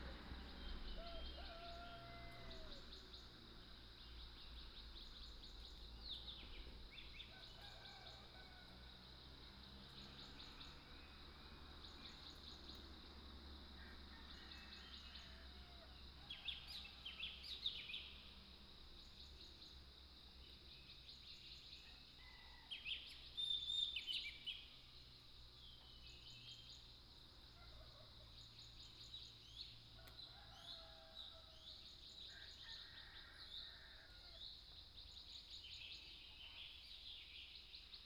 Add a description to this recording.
Early morning, Birdsong, Chicken sounds, Dogs barking, at the Hostel